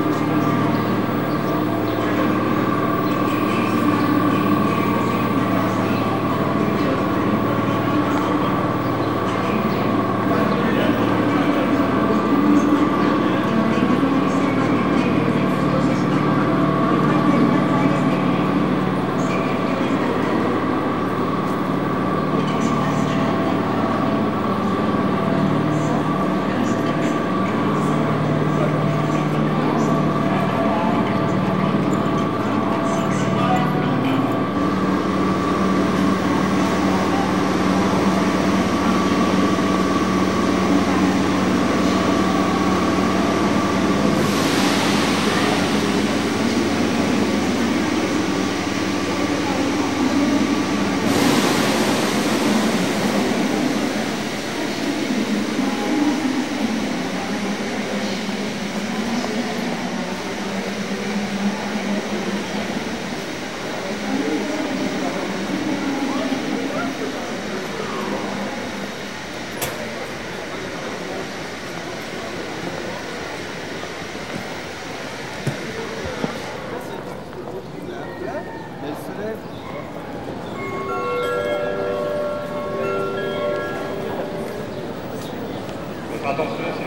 {
  "title": "paris, gare d'austerlitz, at ticket barriers",
  "date": "2009-12-12 13:12:00",
  "description": "inside the station at the ticket barriers as a train arrives. announcements, passing steps, voices and station waggons\ninternational cityscapes - social ambiences and topographic field recordings",
  "latitude": "48.84",
  "longitude": "2.36",
  "altitude": "39",
  "timezone": "Europe/Berlin"
}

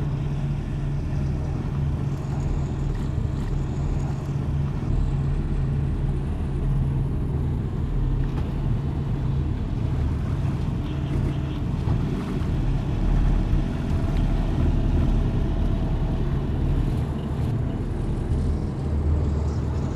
{
  "title": "Quai Voltaire, Paris, France - (384) Waves of the Seine",
  "date": "2018-09-27 16:32:00",
  "description": "Waves of the Seine, tourist cruisers and city ambient around Louvre.\nrecorded with Soundman OKM + Sony D100\nsound posted by Katarzyna Trzeciak",
  "latitude": "48.86",
  "longitude": "2.33",
  "altitude": "47",
  "timezone": "Europe/Paris"
}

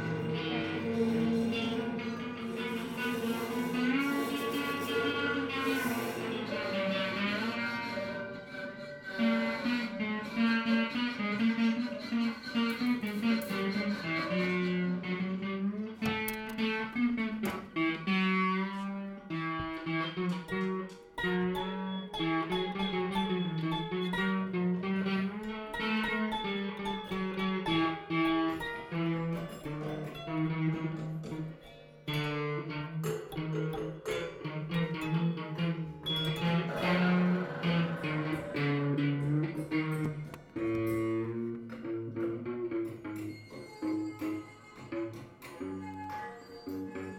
{
  "title": "Ligovsky Ave, St Petersburg, Russia - Sound Museum - part 1",
  "date": "2016-07-27 17:30:00",
  "description": "Binaural recordings. I suggest to listen with headphones and to turn up the volume.\nIt's the Museum of Sound, placed in St. Peterburg. Here, people (and also me) playing with strange instruments.\nRecordings made with a Tascam DR-05 / by Lorenzo Minneci",
  "latitude": "59.93",
  "longitude": "30.36",
  "altitude": "23",
  "timezone": "Europe/Moscow"
}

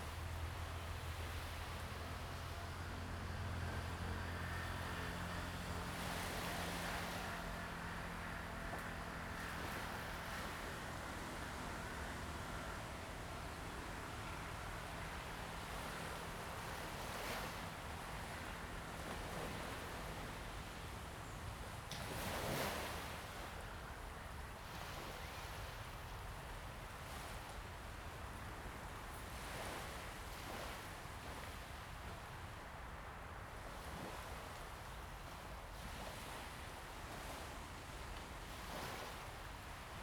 {
  "title": "慈堤, Jinning Township - Waves and birds sound",
  "date": "2014-11-03 07:18:00",
  "description": "Birds singing, Forest and Wind, Waves and tides\nZoom H2n MS+XY",
  "latitude": "24.46",
  "longitude": "118.30",
  "altitude": "7",
  "timezone": "Asia/Taipei"
}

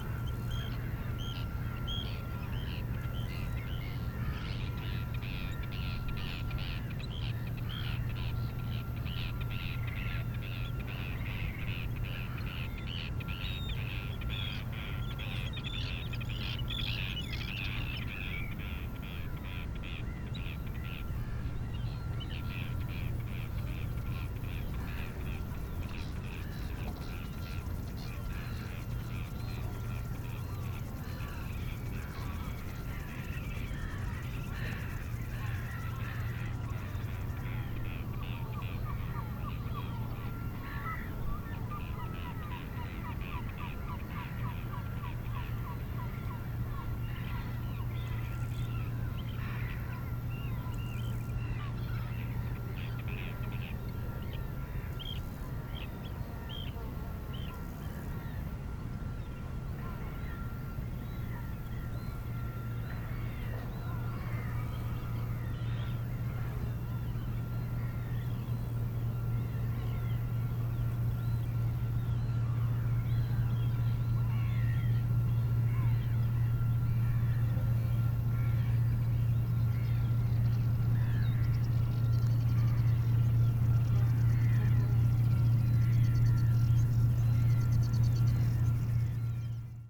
{
  "title": "Tallinn, Kopli, Sepa",
  "date": "2011-07-07 09:55:00",
  "description": "tallinn, kopli, seaside, ambience",
  "latitude": "59.46",
  "longitude": "24.68",
  "altitude": "3",
  "timezone": "Europe/Tallinn"
}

